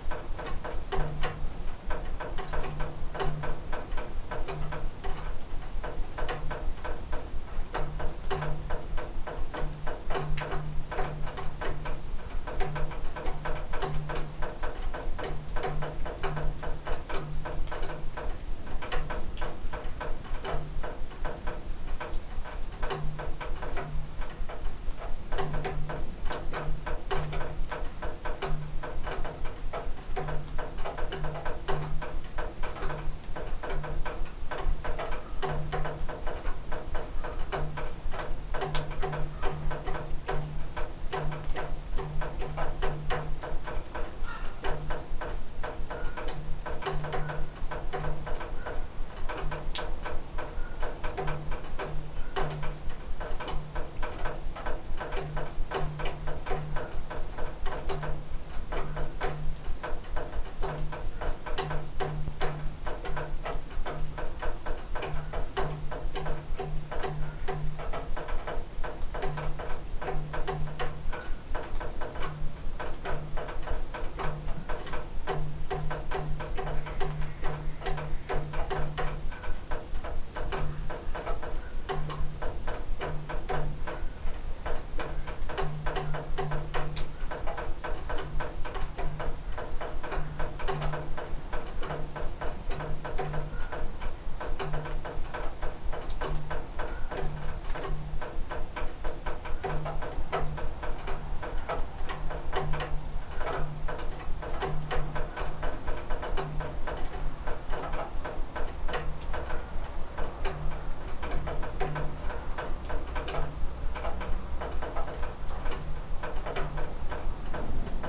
Šlavantai, Lithuania - House porch after the rain
Water dripping around a house porch after the rain. Recorded with ZOOM H5.
Lazdijų rajono savivaldybė, Alytaus apskritis, Lietuva